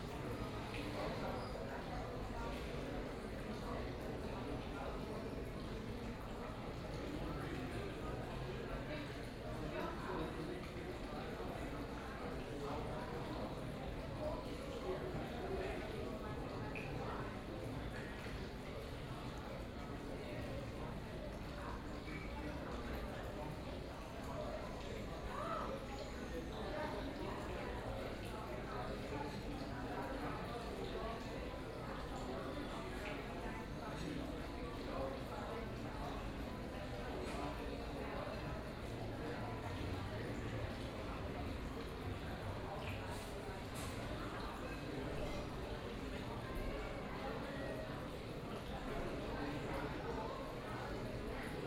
Aarau, Tuchlaube under the arc, Schweiz - Tuchlaube arc
In front of the theater